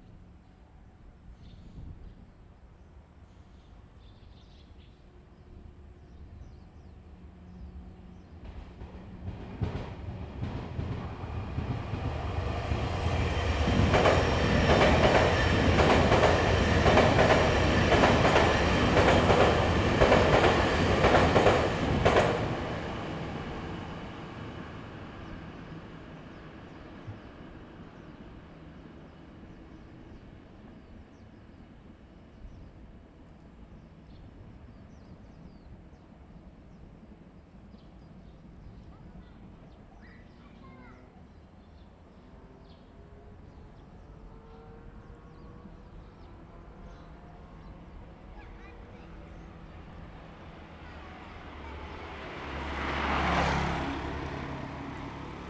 中城里, Yuli Township - Train traveling through
Next to the railway, Train traveling through
Zoom H2n MS +XY